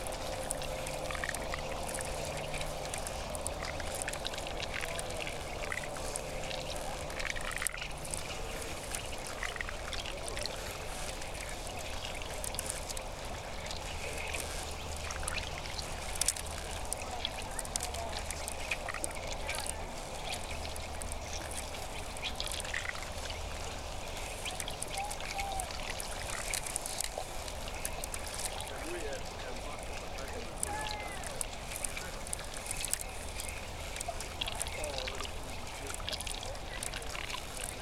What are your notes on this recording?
Big patches of ice floating on light waves, recorded on the pier of Ontario Place marina. Tascam DR05, EM172 mics